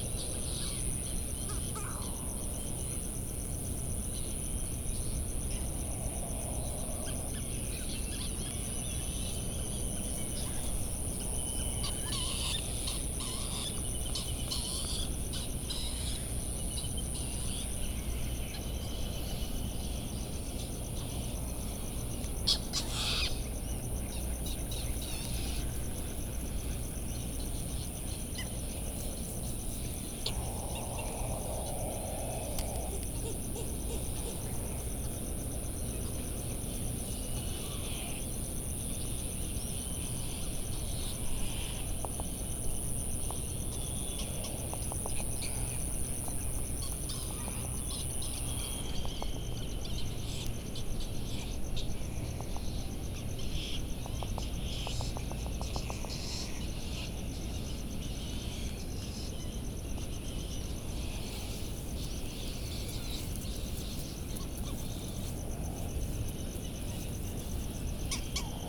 United States Minor Outlying Islands - Bonin petrel soundscape ...
Bonin petrel soundscape ... Sand Island ... Midway Atoll ... bird calls ... bonin petrels ... laysan albatross ... white tern ... black noddy ... open lavaliers on mini tripod ... back ground noise ...